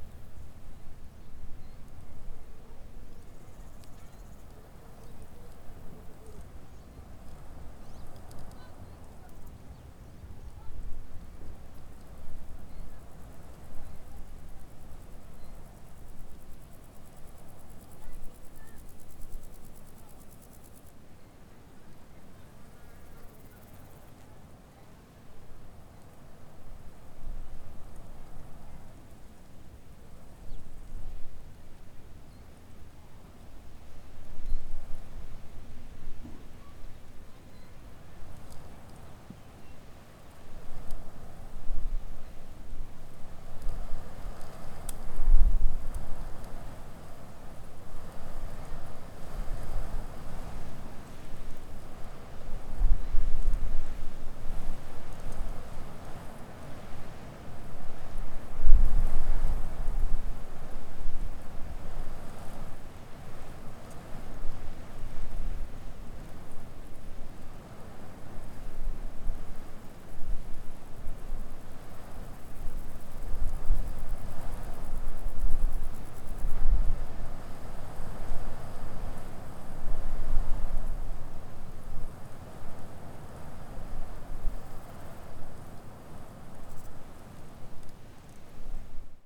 listening to the village Candal from a distance, mostly wind and insects but also some sounds from the village. world listening day, recorded together with Ginte Zulyte.